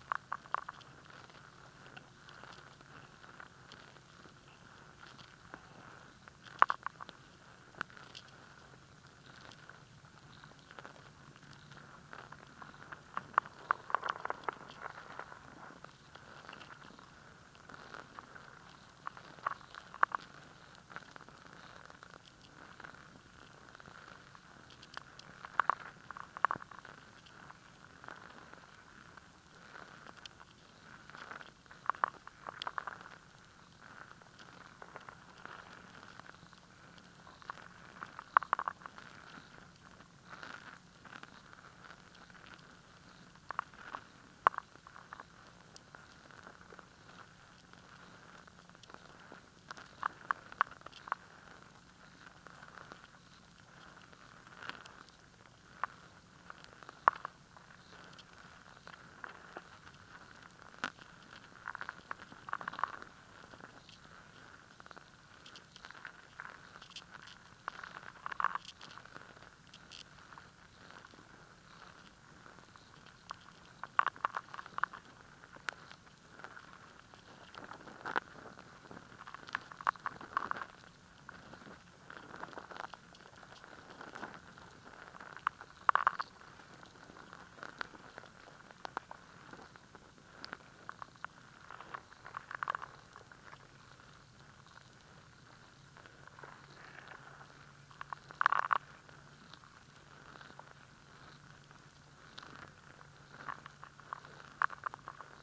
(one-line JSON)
{"title": "Cattle Point Tidal Pool Lekwungen Territory, Victoria, BC, Canada - ReciprocalListening-BarnaclePool", "date": "2020-07-16 09:15:00", "description": "Lekwungen lands and waters, the Salish Sea.\nListening for hidden sounds\nIntimate sounds\nOld sounds\nInside my body\nInside layers of rock\nAncestors\nUnder the water\nWater licking rock as the tide ebbs, low tide, no wind\nBarnacle casings.\nUnder the surface, though, life.\nRhythm of scurrying, eating, crunching.\nThe way language forms from these sounds\nGuttural gurgling wet unfolding.\nResonating from deeper in the chest.\nFrom below the feet\nFrom being encased in these rocks.\nChanging how I think of my speech.\nListening from the perspective of a barnacle.\nResponse to \"Reciprocal Listening\" score for NAISA WorldListeningDay2020\nRecorded with hydrophone pair.", "latitude": "48.44", "longitude": "-123.29", "altitude": "4", "timezone": "America/Vancouver"}